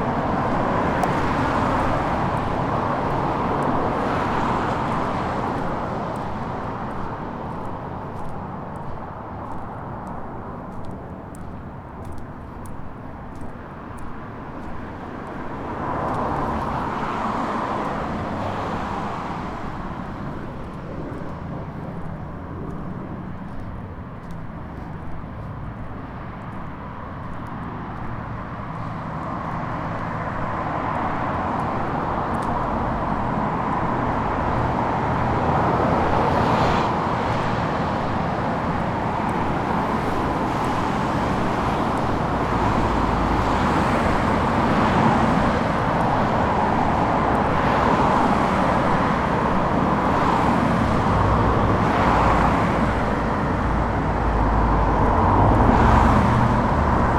Whittier, Boulder, CO, USA - Intersection Sounds
14 February, 17:30